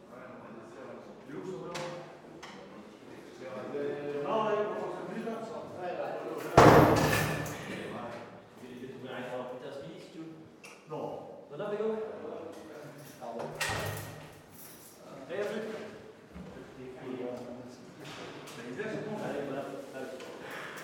Rte des Bruyères, Longuenesse, France - Longuenesse - Pas-de-Calais - Centre de Détention
Longuenesse - Pas-de-Calais
Centre de Détention
fermeture des cellules